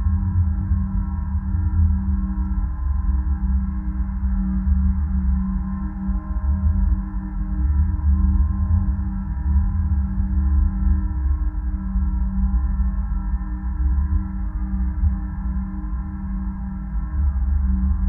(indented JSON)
{
  "title": "Svėdasai, Lithuania, support wire",
  "date": "2019-10-06 16:30:00",
  "description": "mobile tower at the road. contact microphones on the tower's support wires",
  "latitude": "55.67",
  "longitude": "25.36",
  "altitude": "107",
  "timezone": "Europe/Vilnius"
}